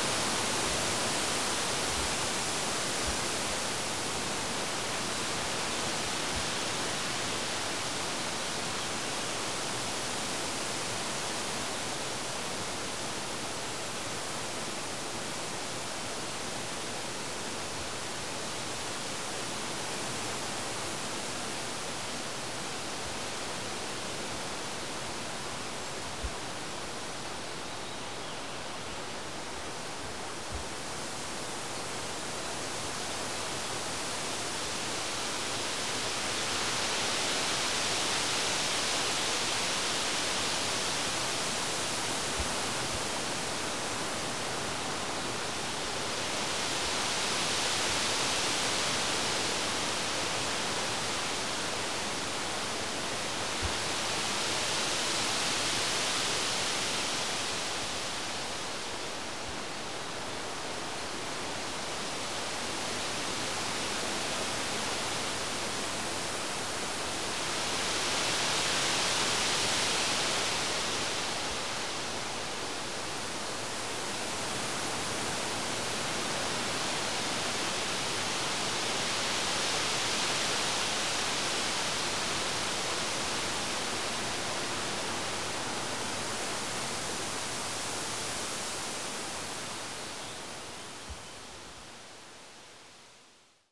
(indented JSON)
{
  "title": "Sasino, forest road - trees quivering in the wind",
  "date": "2013-06-29 10:58:00",
  "description": "a bunch of tall trees, mainly birch, swayed severely in the wind producing beautiful, intricate noise. a few birds were able to break through with their chirps but other than that the hiss was overwhelming the sound scape. no processing was done to the recording except 80Hz LPF turned on in the recorder in order to prevent wind blasts.",
  "latitude": "54.77",
  "longitude": "17.74",
  "altitude": "12",
  "timezone": "Europe/Warsaw"
}